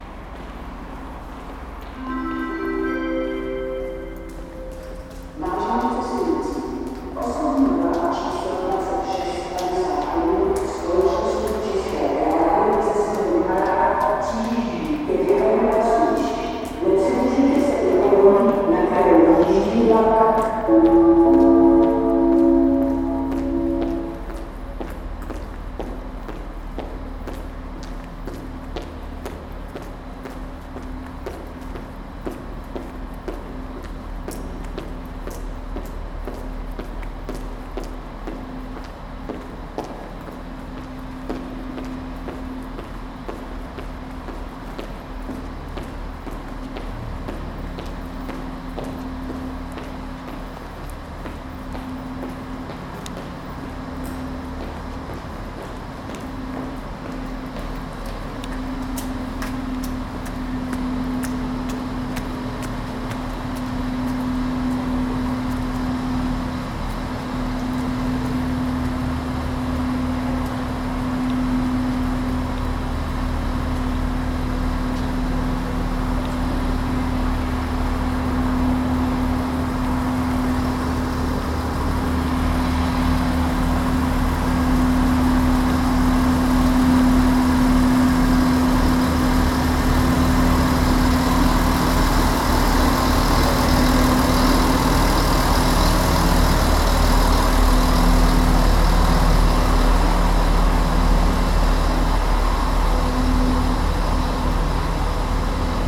Vlakové nádraží Liberec Nákladní, Liberec, Česko - Central station Liberec
Central station, train arrival announcement, heels in the subway and the sound of the waiting train engine.
Severovýchod, Česká republika, June 2020